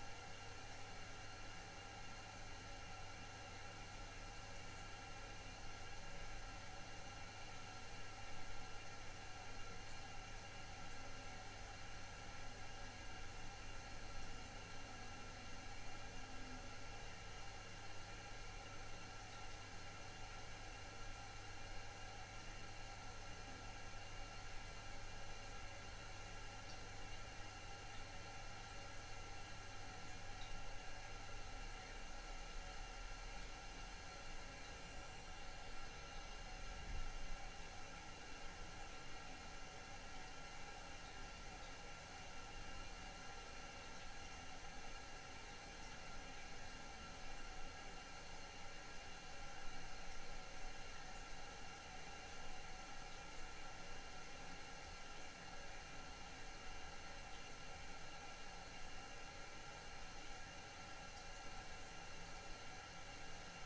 The leaky tap in the old bathroom, Reading, UK - Leaky old tap
The now extinct sound of the leaky tap in our old bathroom. Before the recent re-fit, our bathroom tap was constantly pouring water away. We had to secure a flannel around it with a rubber band, to channel the heavy leak into the bath and to stop water from going back into the faucet and leaking down through the bath into the electric system of the lights in the kitchen below, thus tripping the fuse-box! So we had constantly this sound. The long, slow, eternal hiss of the bathroom tap leaking. It's stopped now and we have a fancy new bathroom, but it has changed the way the house sounds to remove the bath and get rid of this leaky faucet which was a sonic feature of daily life for some months round here.
7 January